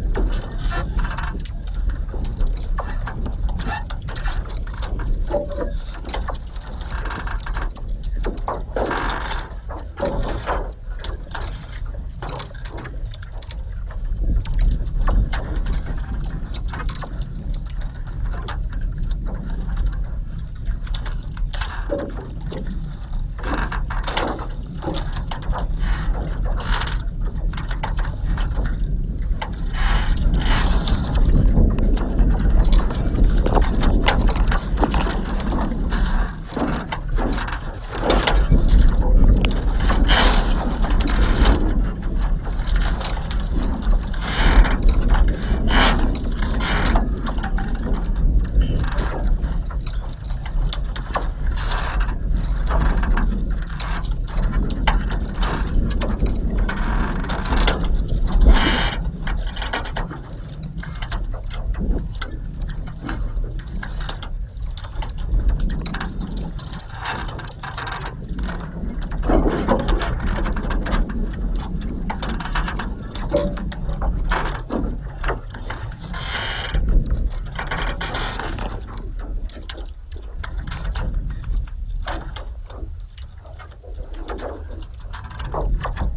Šlavantai, Lithuania - Frozen pond slowly melting
Contact microphone recording of a frozen pond at melting temperature. Four microphones were used and mixed together. Various ice cracking sounds are heard together with gushes of wind blowing along the surface.